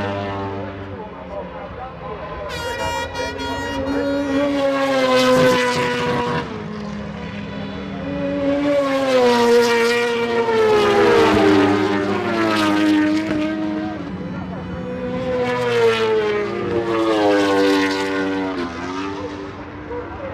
Castle Donington, UK - British Motorcycle Grand Prix 2003 ... moto grand prix ...
Race ... part two ... Starkeys ... Donington Park ... 990cc four strokes and 500cc two strokes ... race plus associated noise ... air horns ... planes flying into East Midlands airport ...
Derby, UK, 13 July 2003, 14:30